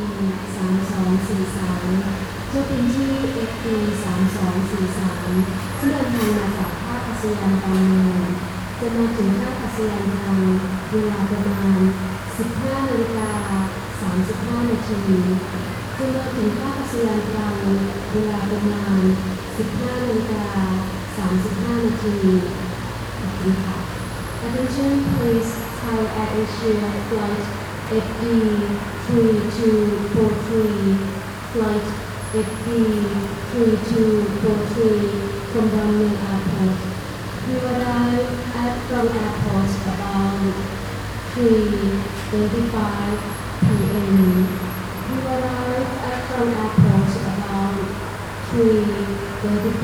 fan, trang airport
(zoom h2, binaural)
จังหวัดตรัง, ราชอาณาจักรไทย, March 2013